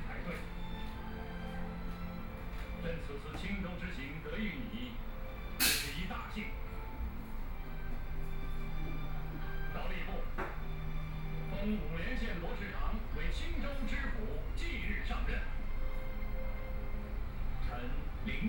Zhongyang N. Rd., Beitou Dist. - Dental Clinic
Dental Clinic, TV sound, Physicians and the public dialogue, Binaural recordings, Sony Pcm d50+ Soundman OKM II